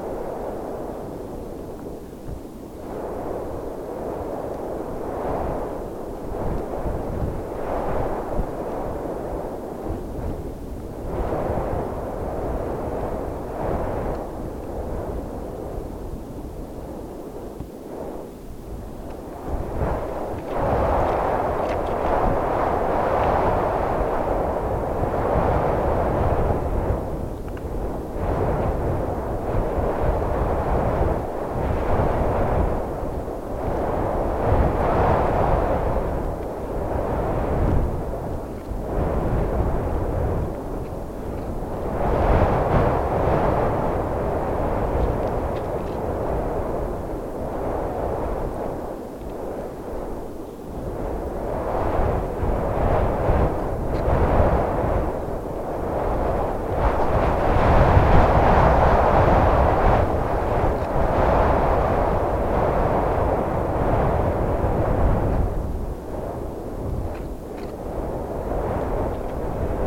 Meyrueis, France - Wind in fir
An isolated fir is suffering in a strong wind.
30 April 2016